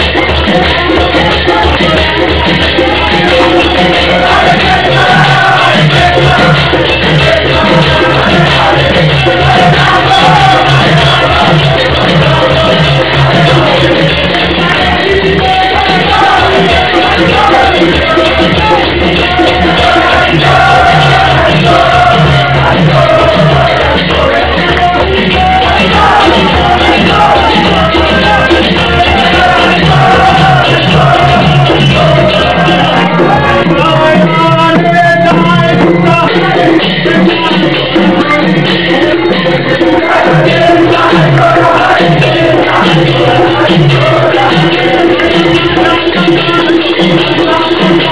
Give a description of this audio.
The high point of the Sunday programme at the Hare Krishna Pretoria temple in South Africa.